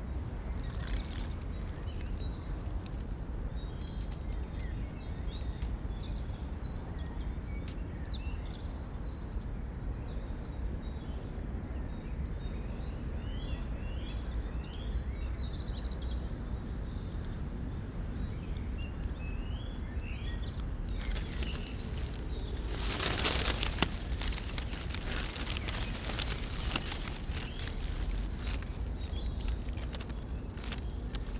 Also recorded from the continuous stream. The rain has stopped but the gusty wind continues. Traffic is still the background drone. Planes fly above. The birds sound distant, but a nuthatch and great tits are calling. Later robins, a blackbird, chaffinch and chiffchaff sing. Tram wheels squealing from the valley below create a high-pitched tone. A freight train rumbles past on the track very close to the microphones.